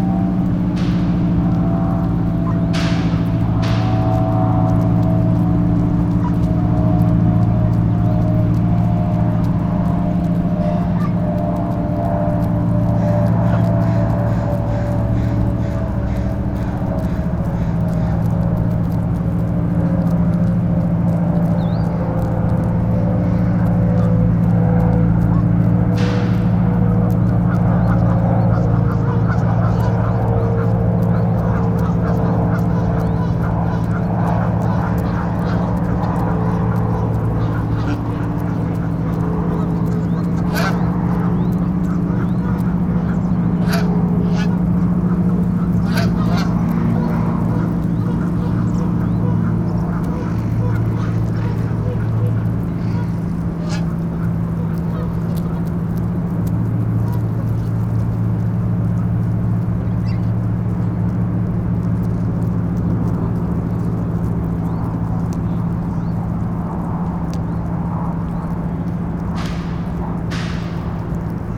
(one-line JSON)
{"title": "Geese at Gas Works, Wallingford, Seattle, WA, USA - Geese eating grass", "date": "2013-08-12 14:42:00", "description": "About fourty or so Canada Geese pulling up young shoots of grass as they slowly make their way toward me. Boats motoring past, air traffic, a cyclist, crows and various unidentified birds.\nSony PCM D50", "latitude": "47.65", "longitude": "-122.33", "altitude": "11", "timezone": "America/Los_Angeles"}